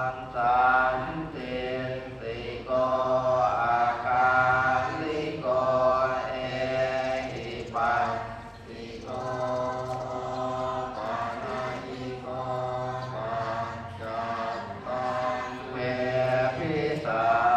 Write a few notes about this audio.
Grand Palace, Hor Phra Monthian Dharma, prayers, (zoom h2, binaural